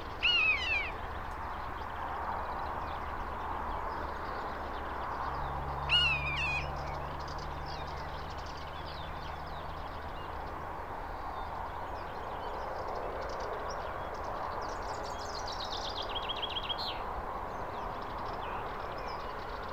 {"title": "Chania, Stalos, birds at highway", "date": "2019-05-08 10:20:00", "latitude": "35.51", "longitude": "23.95", "altitude": "27", "timezone": "Europe/Athens"}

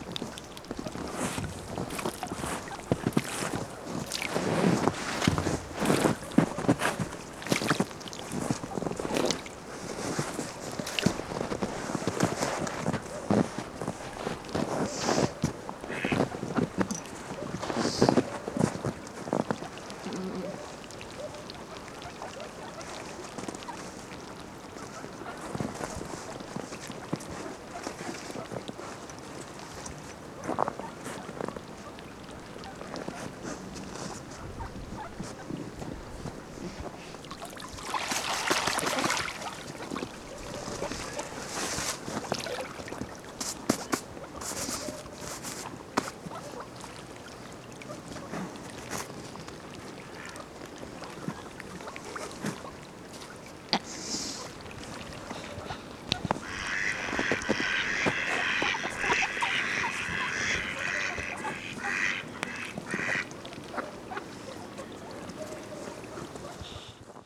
{"title": "Lithuania, Utena, ducks and more", "date": "2011-01-02 17:02:00", "description": "from the footbridge over frozen little river", "latitude": "55.51", "longitude": "25.60", "altitude": "102", "timezone": "Europe/Berlin"}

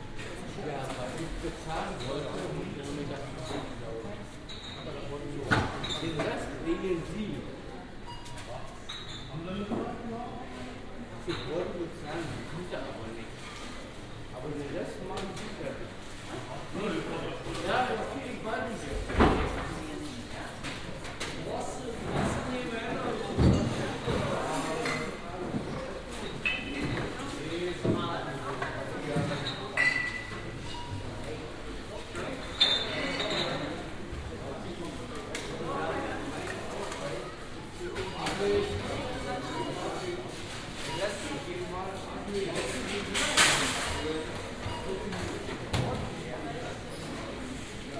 {"title": "Plus-Markt Rathauspassage", "date": "2008-06-14 18:10:00", "description": "sa, 14.06.2008, 18:10\nstress im plus, betrunkener erhält ladenverbot und will seinen ausweis zurück, polizei kommt, ist aber nicht zuständig, weil sie sich um einen ladendiebstahl kümmern muss", "latitude": "52.52", "longitude": "13.41", "altitude": "39", "timezone": "Europe/Berlin"}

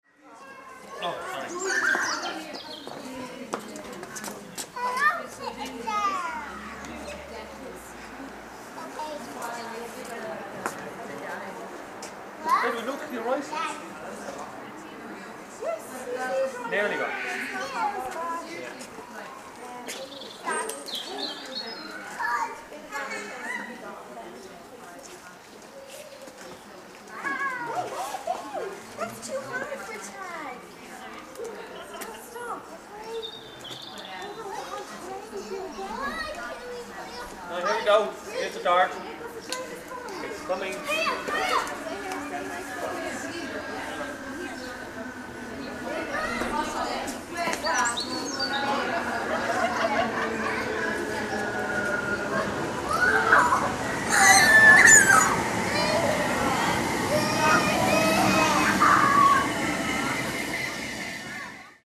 {"title": "Dalkey, Co. Dublin, Ireland - Waiting for the train", "date": "2013-03-03 17:00:00", "description": "People talking, Robin singing, approaching train. Olympus LS-10", "latitude": "53.28", "longitude": "-6.10", "timezone": "Europe/Dublin"}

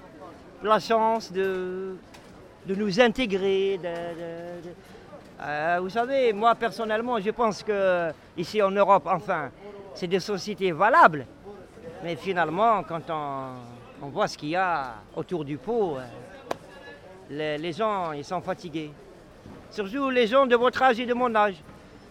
{"title": "Rue du Marché des Capucins, Marseille, France - Marché de Noailles - Marseille", "date": "2020-08-25 16:30:00", "description": "Marseille\nMarché de Noailles, un après midi du mois d'août.\nZOO H3VR", "latitude": "43.30", "longitude": "5.38", "altitude": "27", "timezone": "Europe/Paris"}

